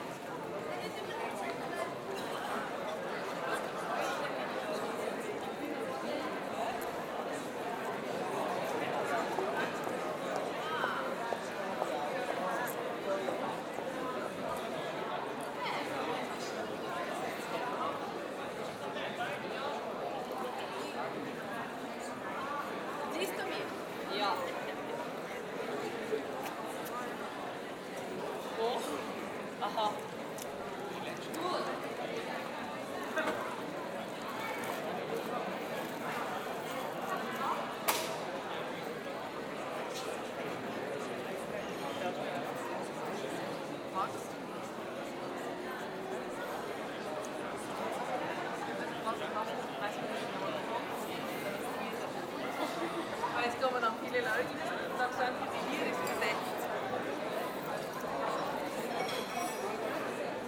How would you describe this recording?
Half an hour before the Maienzug passes by - a march of children between 5 to 18, accompanied by teachers, educators and brass bands - already a brass band is playing and people are chatting in the Rathausgasse.